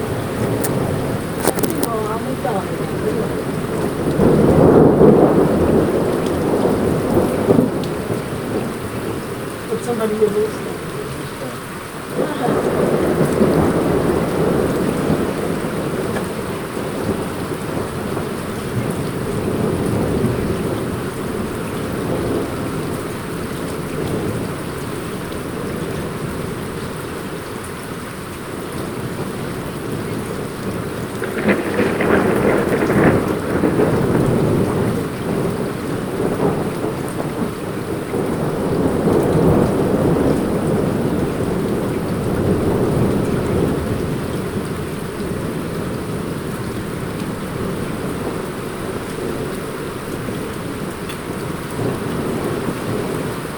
Via della Stella Cinese, Alcamo TP, Italia - Storm